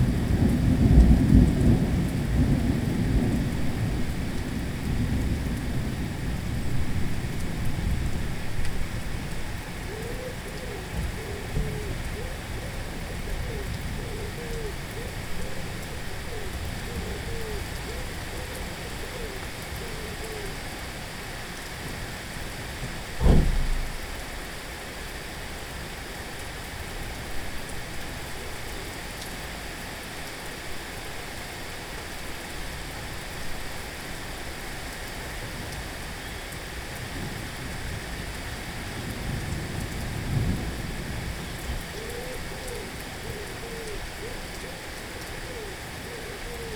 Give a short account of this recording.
Zoom H4n, Storm + Rain, early.